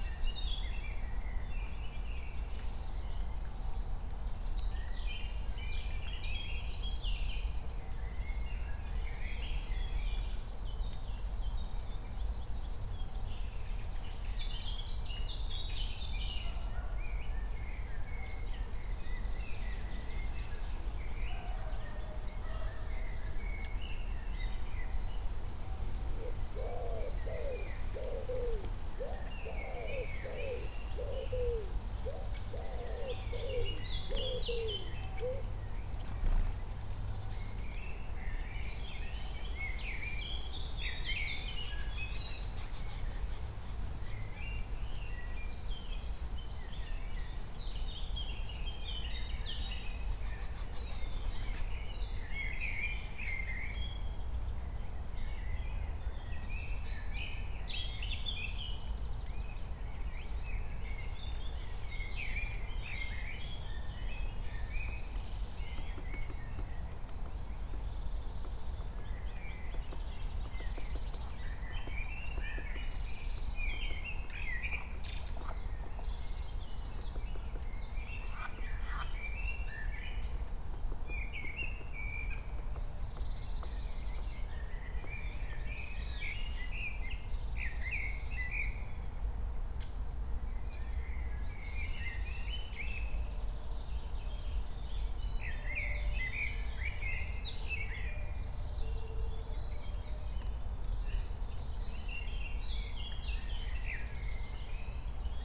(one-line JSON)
{"title": "Königsheide, Berlin - forest ambience at the pond", "date": "2020-05-23 12:00:00", "description": "12:00 drone, wind, Bells, birds, woodpecker", "latitude": "52.45", "longitude": "13.49", "altitude": "38", "timezone": "Europe/Berlin"}